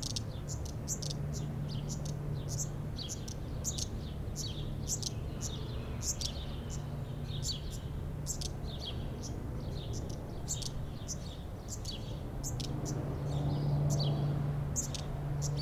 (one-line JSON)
{"title": "Rue Jacques Cartier, Aix-les-Bains, France - Nouveaux nés", "date": "2022-06-16 12:00:00", "description": "Jeunes rouges-queues, sortis du nid nourris par leur mère.", "latitude": "45.70", "longitude": "5.89", "altitude": "235", "timezone": "Europe/Paris"}